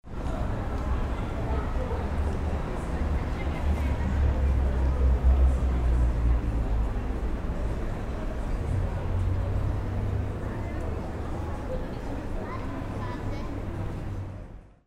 RadioRijeka, Passage, people@Korzo
Pedestrian passage across building of Radio Rijeka.
recording setup: M-audio micro track default mics.